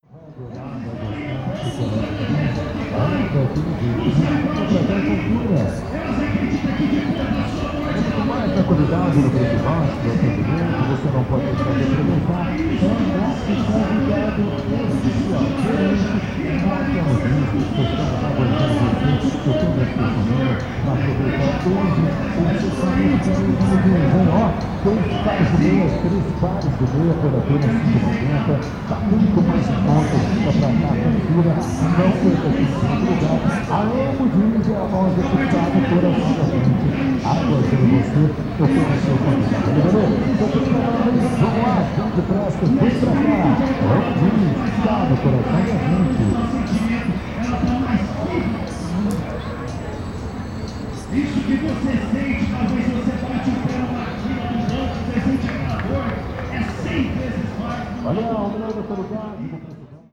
Calçadão de Londrina: Evangelizadores - Evangelizadores / Evangelizers
Panorama sonoro: Evangelizador pregava com auxílio de um microfone e caixa de som nas proximidades da Praça Marechal Floriano Peixoto. Algumas pessoas, sentadas em bancos e nos degraus da praça, acompanhavam a pregação. Um locutor anunciava ofertas e produtos de uma loja de roupas. De outra loja, de bijuterias e acessórios, eram emitidas músicas.
Sound panorama: evangelizer preached with the aid of a microphone and sound box near the Marechal Floriano Peixoto Square. Some people, sitting on benches and on the steps of the square, followed the preaching. A announcer announced offers and products from a clothing store. From another store, jewelry and accessories, music was issued.
Londrina - PR, Brazil, 29 May 2017